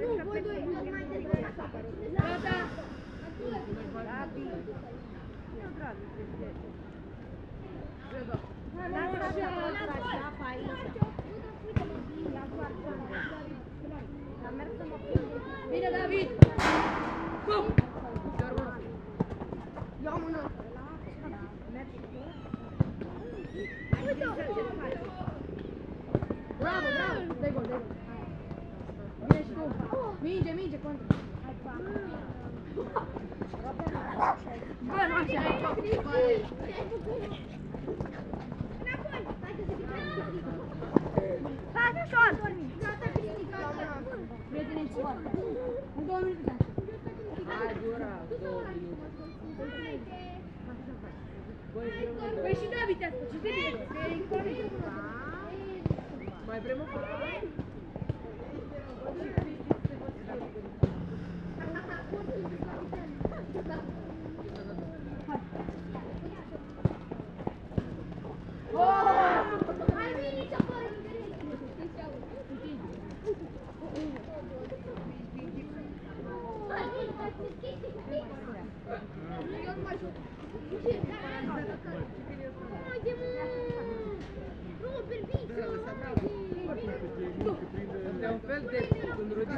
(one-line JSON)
{"title": "Tineretului Park, București, Romania - Kids Playing Football in Tineretului Park", "date": "2016-10-02 12:20:00", "description": "Recording outside a small, concrete-floor football field with a SuperLux S502 ORTF Stereo Mic plugged into Zoom F8", "latitude": "44.40", "longitude": "26.11", "altitude": "84", "timezone": "Europe/Bucharest"}